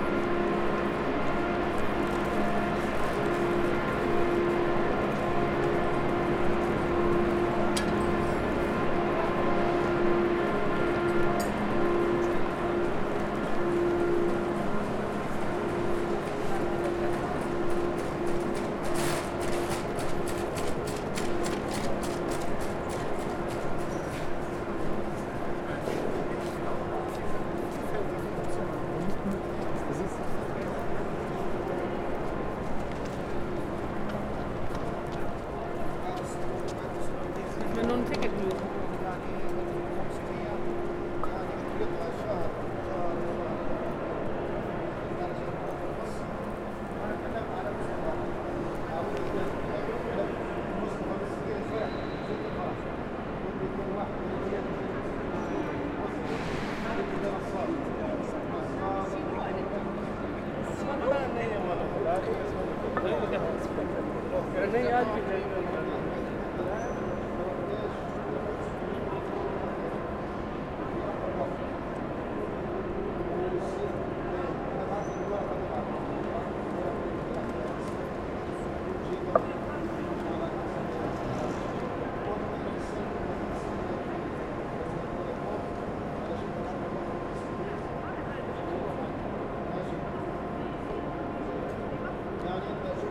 The lockdown is over since two weeks, the station is still no tas busy as it was, but many more people are crossing the great hall before they enter the platforms. People are buying flowers and talking on the phone without masks...